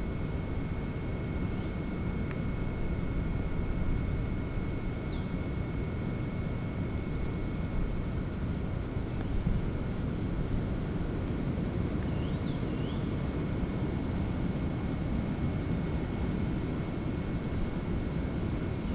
Ekosystémová stanice Lanžhot, Czechia - zvuk měřících přístrojů a vítr v korunách
Ekosystémová stanice je součástí evropské infrastruktury projektu ICOS (Integrated Carbon Observation System) začleněného do mezinárodní výzkumné infrastruktury ESFRI (European Strategy Forum on Research Infrastructures) stanice I. třídy součástí národní vědecko-výzkumné infrastruktury pro sledování uhlíku v ČR.
Jihomoravský kraj, Jihovýchod, Česko